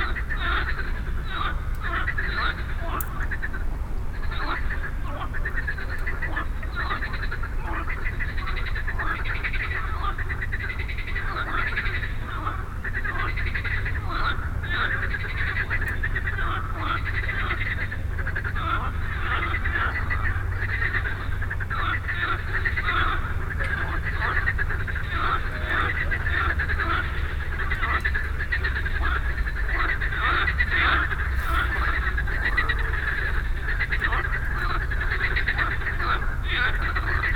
Niévroz, Les Grenouilles du Lac des Pyes / Frogs at the Pyes lake. It was during the week without planes because of the volcano in Iceland. Frogs were soooo happy.